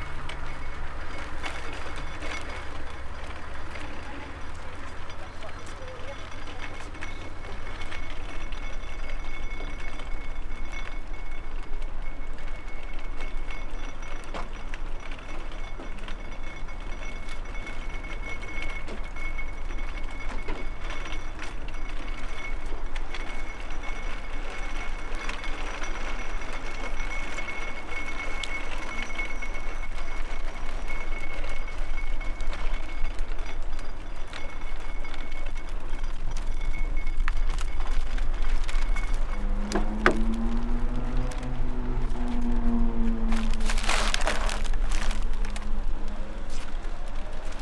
Prisma supermarket, Rovaniemi, Finland - From the counters to the car
Short trip from the counters to the car. Zoom H5 with default X/Y module inside the shopping cart.
Lappi, Manner-Suomi, Suomi